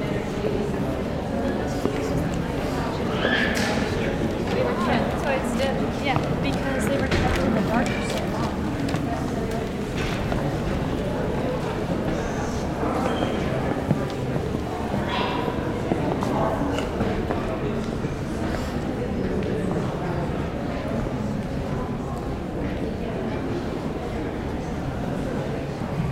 Londres, Royaume-Uni - National Gallery
Inside the National Gallery, Zoom H6
UK, 15 March 2016, 15:30